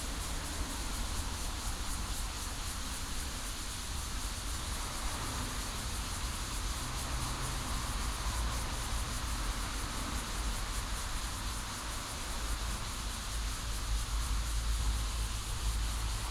Yuemei Zlementary School, Guanshan Township - Cicadas sound
Cicadas sound, Birdsong, Traffic Sound
Guanshan Township, 月眉, 7 September 2014